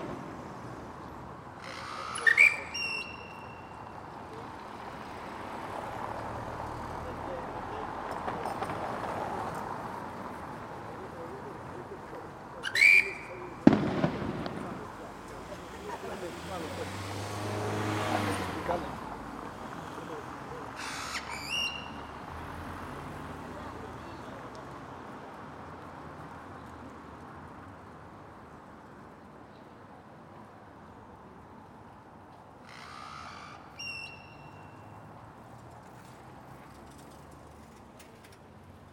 Cholula - Mexique
Ambiance de rue - Matin